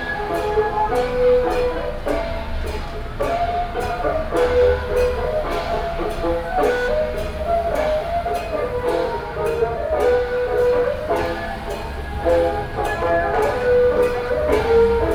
Festivals, Walking on the road, Variety show, Keelung Mid.Summer Ghost Festival
Zhongzheng District, Keelung City, Taiwan, 16 August, 20:27